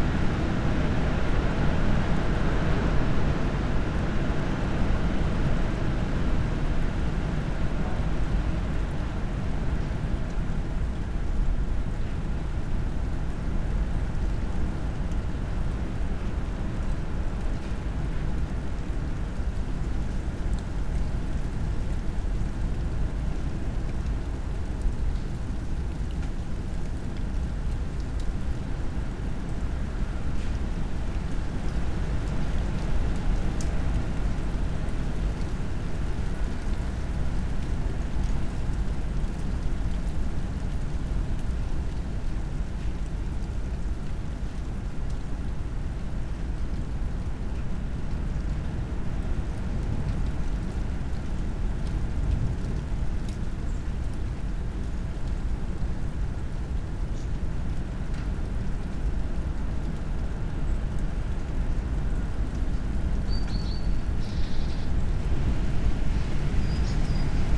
Berlin Bürknerstr., backyard window - strong wind, light snow
constant drone of strong wind in my backyard, effects of a storm hitting the north of germany.
(PCM D50, EM172)
Berlin, Germany, December 2013